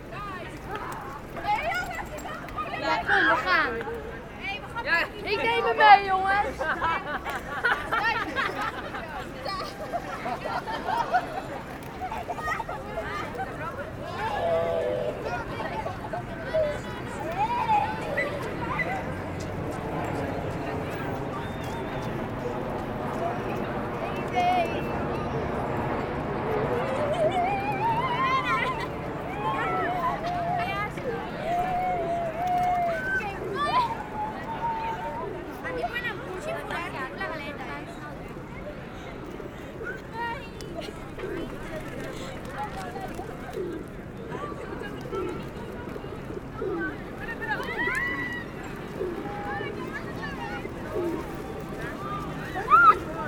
On the central square of Amsterdam, tourists giving rice to the pigeons, teenagers shouting everytime pigeons land on the hands.